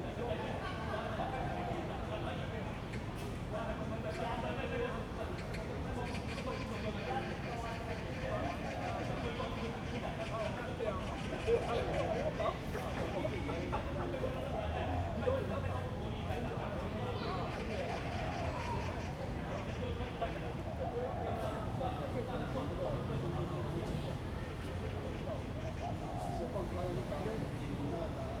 in the Park, After a rain, Traffic Sound, birds sound, The elderly and children
Zoom H2n MS+ XY
Taipei City, Taiwan, 28 July 2015, ~18:00